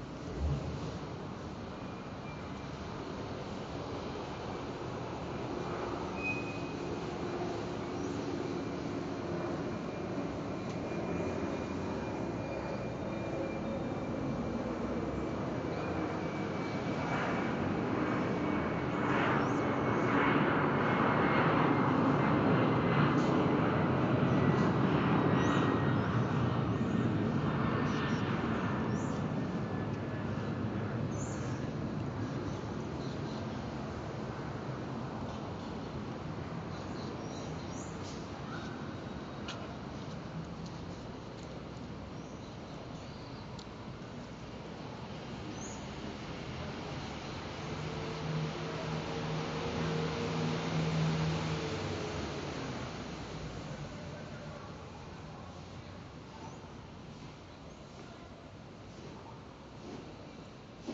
{"title": "Rua Wanda Martin - Vl. Amélia, São Paulo - SP, Brasil - APS AUDIO", "date": "2019-05-02 15:55:00", "description": "APS PARA CAPTAÇÃO E EDIÇÃO DE AUDIO.\nPODEMOS ESCUTAR ARVORES, VENTOS, CARROS, PASSAROS E AVIÕES.", "latitude": "-23.47", "longitude": "-46.65", "altitude": "790", "timezone": "America/Sao_Paulo"}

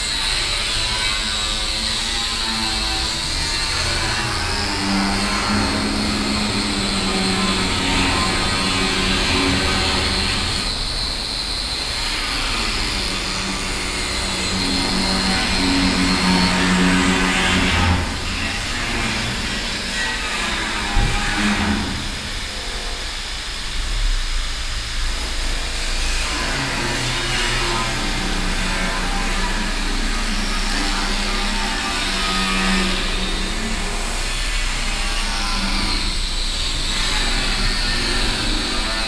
{"title": "Brussels, Rue de Suisse, Grinders all around", "date": "2012-01-27 15:51:00", "description": "The buildings here are nice but old, with the speculation a lot of them are now restored to be sold afterwards.\nPCM-M10, SP-TFB-2, binaural.", "latitude": "50.83", "longitude": "4.35", "altitude": "66", "timezone": "Europe/Brussels"}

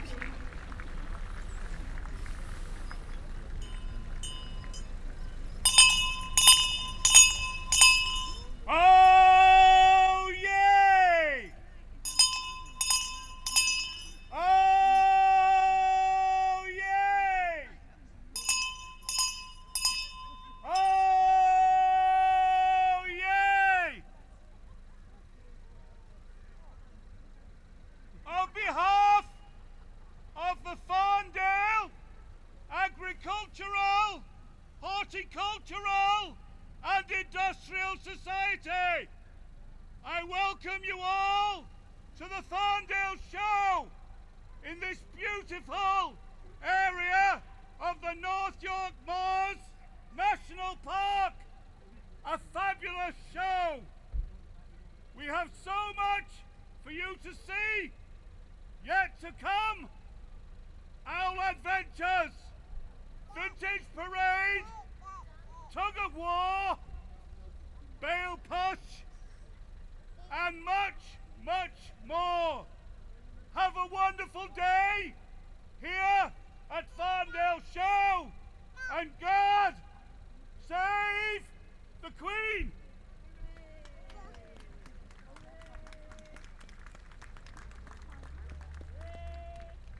{"title": "Red Way, York, UK - Farndale Show ... Town crier ...", "date": "2018-08-27 12:30:00", "description": "Farndale Show ... Helmsley town crier ... lavalier mics clipped to baseball cap ...", "latitude": "54.37", "longitude": "-0.97", "altitude": "161", "timezone": "GMT+1"}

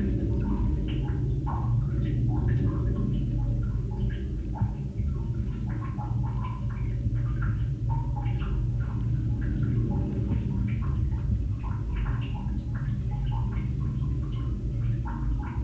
{"title": "Old Concrete Rd, Penrith, UK - Drips in Chamber", "date": "2019-08-07 11:41:00", "description": "dripping heard through cover of water installation. 2x hydrophones.", "latitude": "54.51", "longitude": "-2.69", "altitude": "254", "timezone": "Europe/London"}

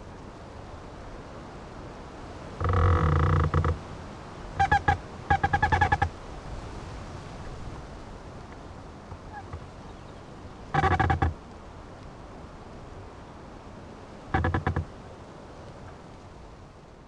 {"title": "Lithuania, lake Ilgis, a tree in the wind", "date": "2011-05-14 16:15:00", "description": "tree in the windy day", "latitude": "55.50", "longitude": "25.73", "timezone": "Europe/Vilnius"}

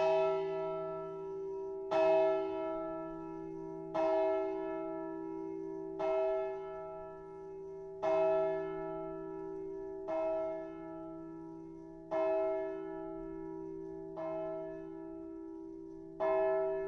{"title": "Opatje selo, Miren, Slovenija - audio Church Bell On Sunday At 7am In Opatje Selo", "date": "2020-02-09 06:59:00", "description": "The clock and wake-up call on Sundays at 7am from local church.\nRecorded with ZOOM H5 and LOM Uši Pro, AB Stereo Mic Technique, 40cm apart.", "latitude": "45.85", "longitude": "13.58", "altitude": "168", "timezone": "Europe/Ljubljana"}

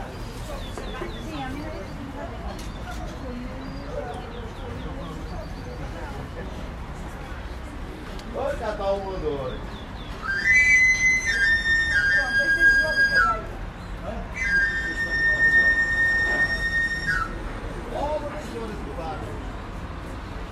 {"title": "R. 5 de Outubro, Buarcos, Portugal - Amolador at Figueira da Foz", "date": "2022-07-25 16:31:00", "description": "Amolador at Figueira da Foz, Portugal. A man riding a specially adapted bicycle to sharpen knives stops to sharpen a knife in front of a restaurant.\nLocals recognise the calling sound of the flute and gather around the \"Amolador\" (knife grinder)", "latitude": "40.16", "longitude": "-8.88", "altitude": "17", "timezone": "Europe/Lisbon"}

